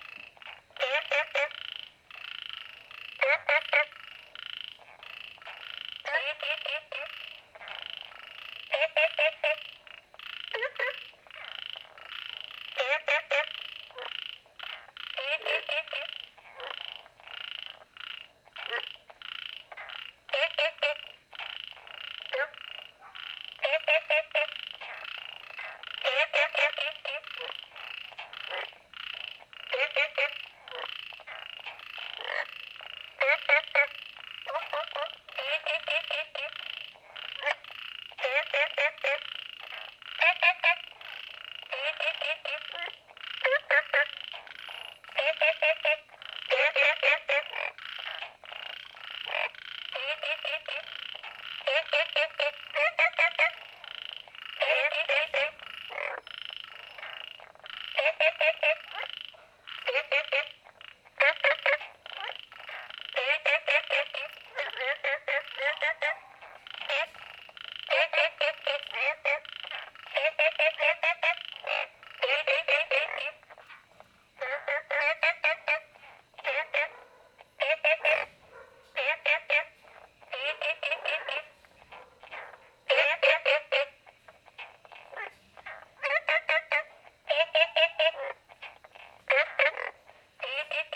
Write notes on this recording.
Frogs chirping, Small ecological pool, Different kinds of frog sounds, Zoom H2n MS+XY